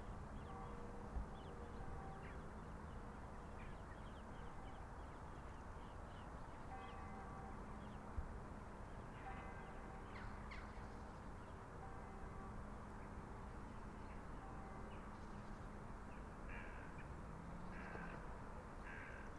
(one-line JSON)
{"title": "Maanstraat, Mechelen, België - Manewater", "date": "2019-01-19 16:40:00", "description": "Church bells and jackdaws", "latitude": "51.04", "longitude": "4.51", "altitude": "4", "timezone": "Europe/Brussels"}